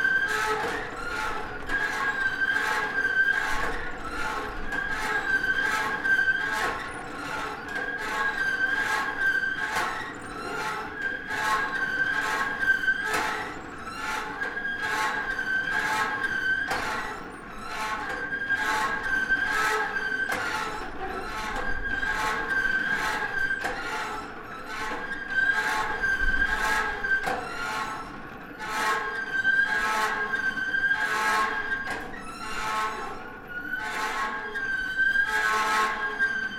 Morning Star Ridge, Lamy, NM, USA - Squeaky Windmill Pumping Water in a New Mexico Desert
Listen to this giant old rusty windmill speed up and slow down as the wind helps it pump water for thirsty cattle in this gorgeous high desert of New Mexico.
New Mexico, United States of America, 20 February 2020